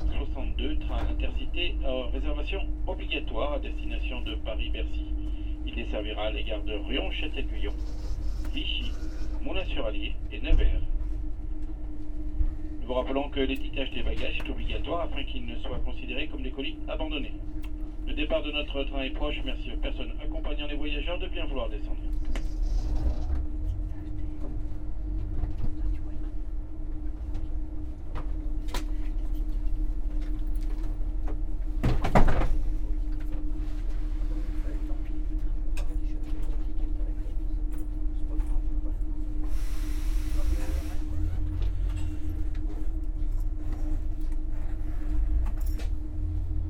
Taking the train in the Clermont-Ferrand station, on a quiet thuesday morning.
Clermont-Ferrand, France